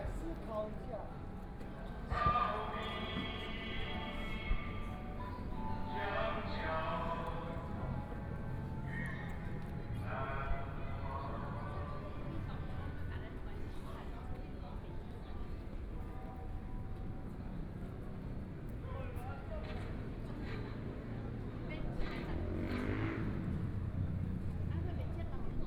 Zhongshan N. Rd., Taipei City - Waiting for a moment before being expelled
Nonviolence, Occupation traffic arteries, Protest against nuclear power, The police are ready to expel the people assembled and Students, Thousands of police surrounded the people, Students sang songs, Waiting for a moment before being expelled
Sony PCM D50+ Soundman OKM II
Zhōngxiào West Rd, 41號米迪卡數位有限公司, 28 April 2014, 03:26